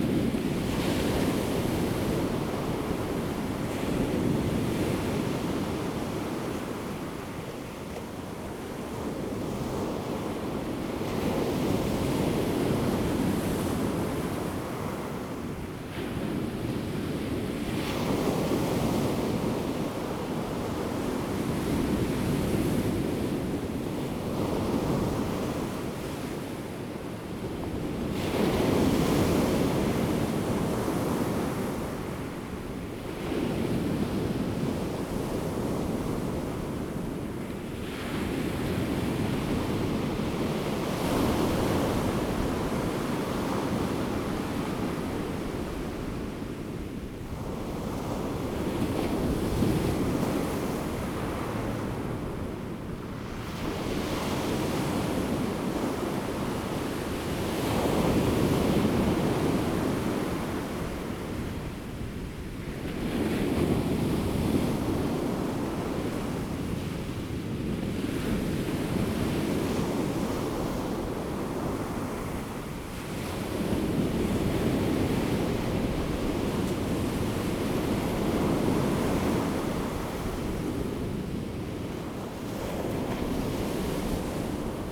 Daren Township, Taitung County - Sound of the waves
Sound of the waves, The weather is very hot
Zoom H2n MS +XY